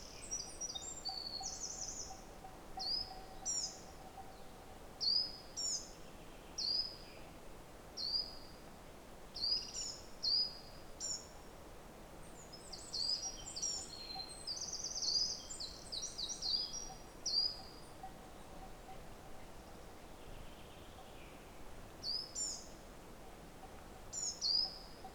Zatolmin, Tolmin, Slovenia - Source of river Tolminka
In 2022 we have a severe drought. So no rain no water. Birds, stream in the distance and cow bell.
Lom Uši Pro, MixPre II